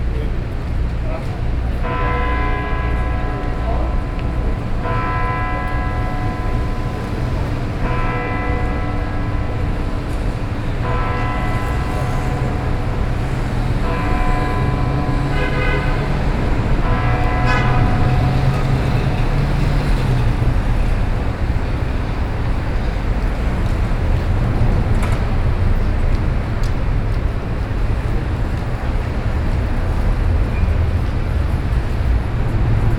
New York, Fifth Avenue, St Thomas Church
NY, USA, 6 September 2010